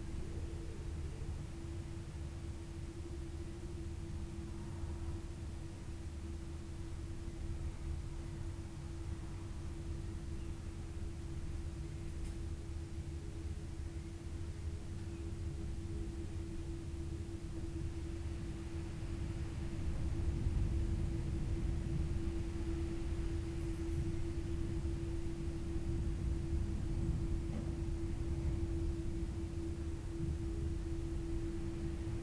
inside church ... outside thunderstorm ... open lavalier mics clipped to a sandwich box ... background noise ... traffic ... etc ...
27 May 2017, 16:30